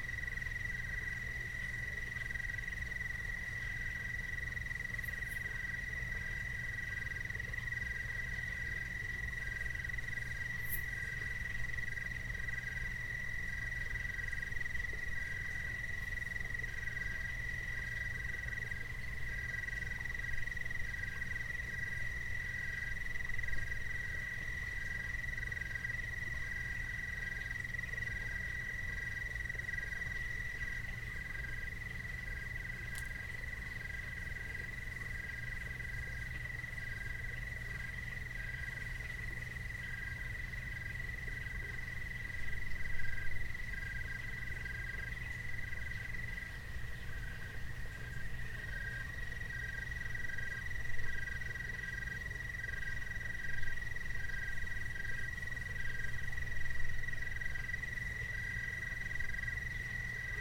Black Rd, Los Gatos, CA, USA - Night forest insects
Scarlet Focusrite 2i2
Aston Origin, Shure SM81-LC mics
Night garden on Black Rd. (unspecified location for privacy reasons), insects, rare birds, a creek which flows alongside the road near the water well.
9 October 2018, 23:35